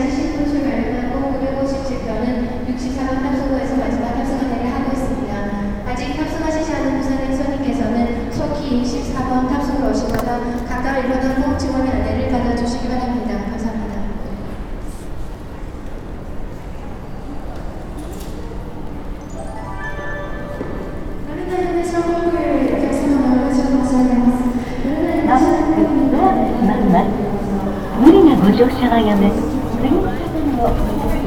tokyo, airport, terminal 1
in the airports departure duty free zone, then entering and driving with the internal gate shuttle train
international city scapes - social ambiences and topographic field recordings
22 August 2010, ~17:00